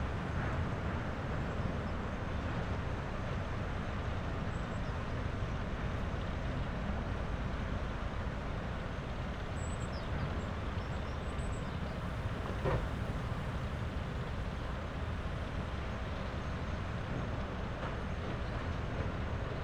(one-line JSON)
{"title": "Steinbruch Steeden, Deutschland - lime stone quarry ambience, stone shredder", "date": "2022-02-07 11:25:00", "description": "lime stone quarry ambience, sound of stone shredder at work\n(Sony PCM D50, Primo EM272)", "latitude": "50.43", "longitude": "8.13", "altitude": "178", "timezone": "Europe/Berlin"}